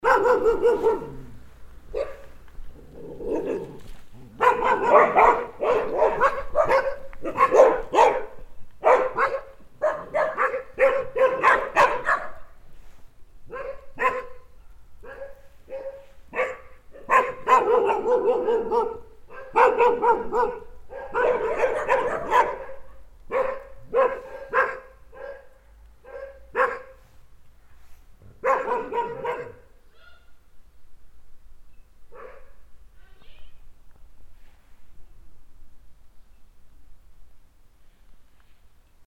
Nearby a historical mill a private garden with a dog run. The sound of four dogs welcoming a stranger until finally the owner calls them to be silent.
Enscherange, Rackesmillen, Hunde
Nahe einer historischen Mühle ein privater Garten mit frei laufenden Hunden. Das Geräusch von vier Hunden, die einen Fremden begrüßen, bis der Besitzer sie schließlich zur Ruhe ruft.
Enscherange, Rackes Millen, chiens
A proximité d’un moulin historique, un chien court dans un jardin privé. Le bruit de 4 chiens qui accueillent un étranger jusqu’à ce que leur propriétaire leur intime l’ordre de se taire.
Kiischpelt, Luxembourg, 13 September 2011